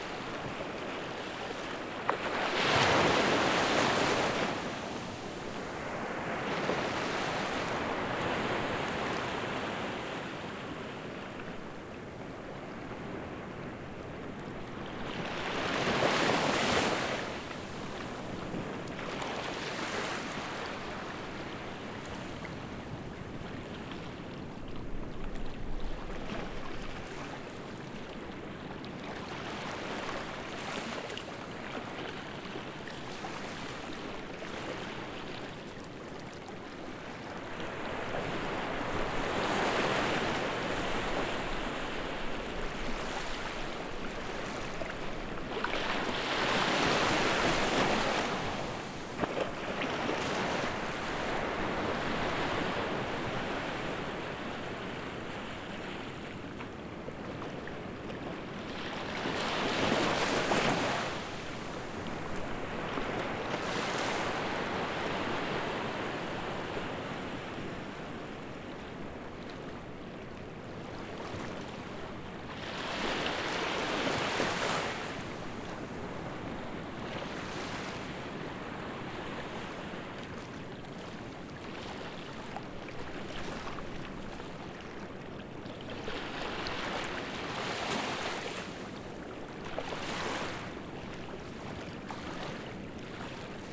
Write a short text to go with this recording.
A wonderful Sunny day on an island in the middle of the Indian ocean. Sound recorded on a portable Zoom h4n recorder